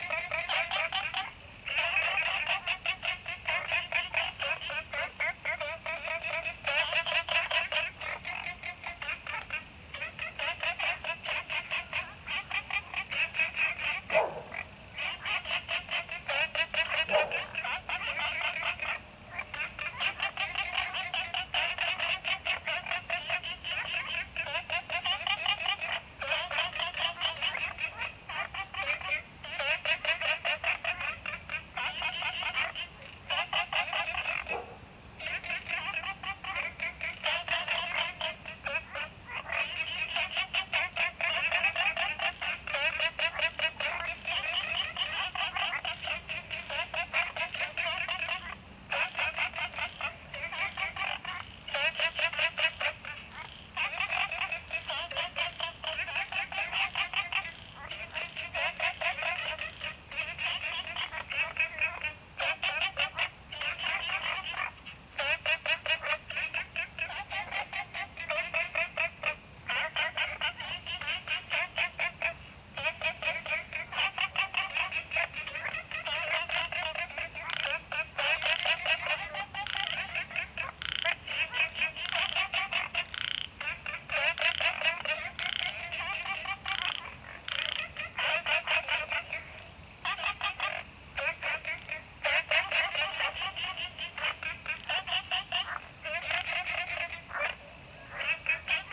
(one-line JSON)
{"title": "青蛙ㄚ 婆的家, Taomi Ln., Puli Township - Frogs chirping", "date": "2015-09-03 20:31:00", "description": "In the bush, Frogs chirping, Small ecological pool\nZoom H2n MS+XY", "latitude": "23.94", "longitude": "120.94", "altitude": "463", "timezone": "Asia/Taipei"}